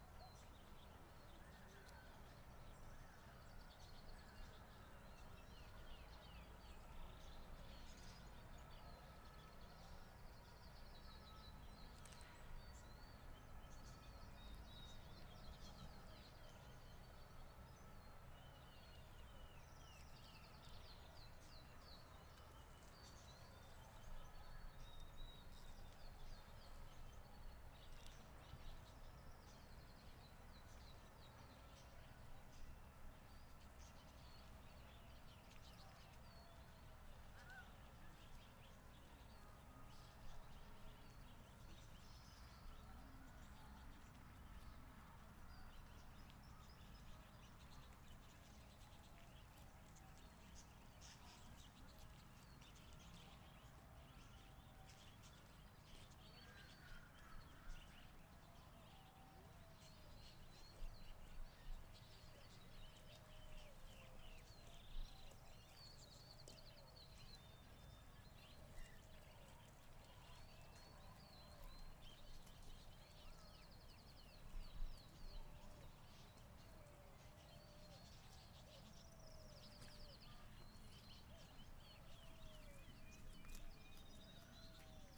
Thabor - St Hélier, Rennes, France - Oiseaux sur la pelouse
On entend des cloches. Des oiseaux picorent sur la pelouse. Les oiseaux s'envolent.